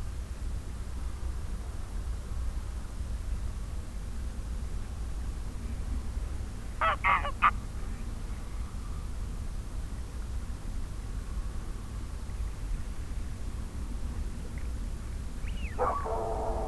lancken-granitz: wiese - the city, the country & me: meadow
wild geese and echoing dog
the city, the country & me: march 5, 2013